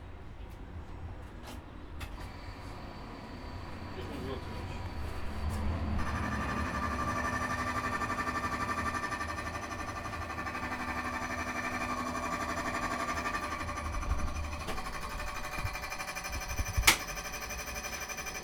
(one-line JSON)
{"title": "Poznan, downtow, 23rd May street, shop - duplicating a key at a locksmith's", "date": "2012-07-18 11:30:00", "description": "sounds of bench grinders and duplicators", "latitude": "52.41", "longitude": "16.93", "altitude": "72", "timezone": "Europe/Warsaw"}